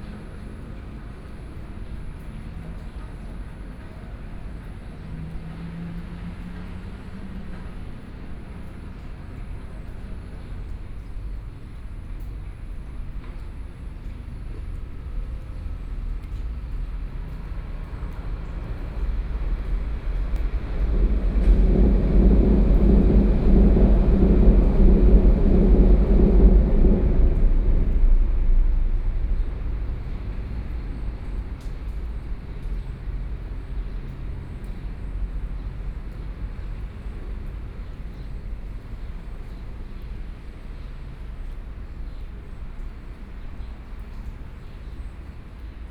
宜蘭市凱旋里, Yilan County - under the railroad tracks
Traffic Sound, Trains traveling through, below the railroad tracks
Sony PCM D50+ Soundman OKM II